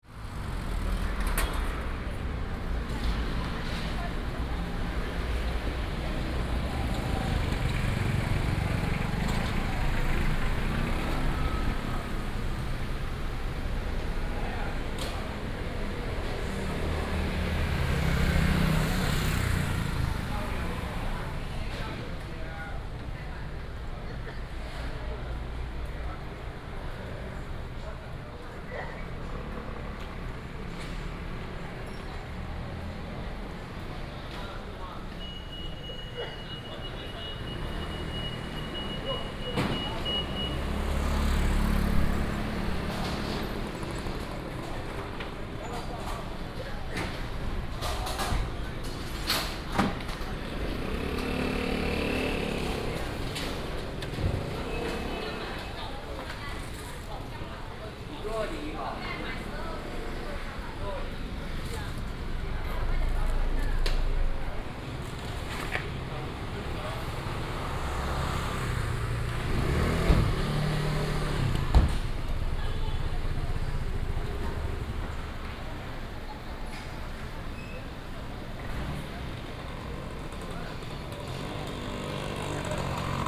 Fruit wholesale business district, traffic sound